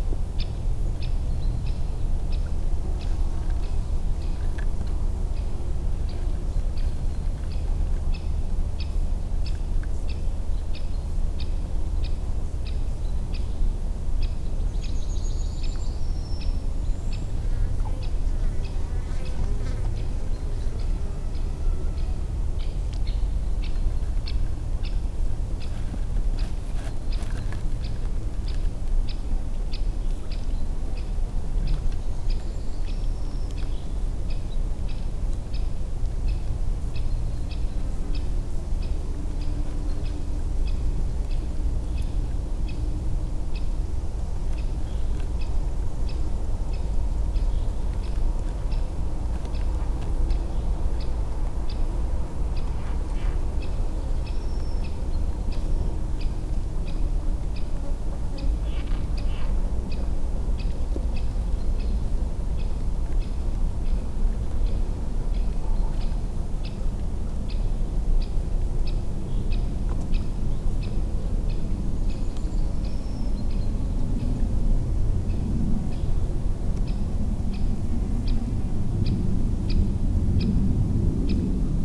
Poznan, Naramowice, meadows of Warta - pond in the morning
ambience at an artificial pond near Warta river. mostly birds and insects. occasionally a fish jumps out of the water. some timid frog croaks. two fisherman talking briefly. (roland r-07)